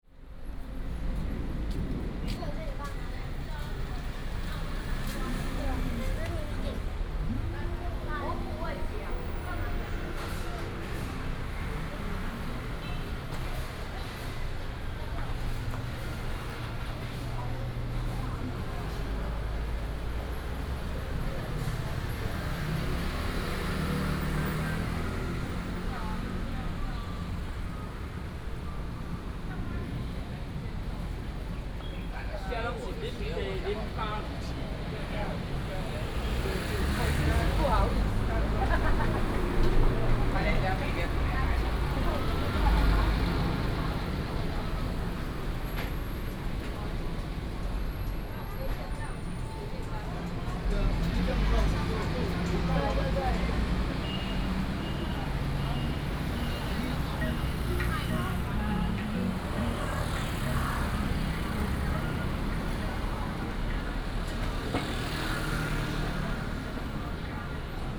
Tonghua St., Da’an Dist., Taipei City - walking in the Street
walking in the Street, Traffic noise, Sound of thunder
Taipei City, Taiwan, 23 July, ~13:00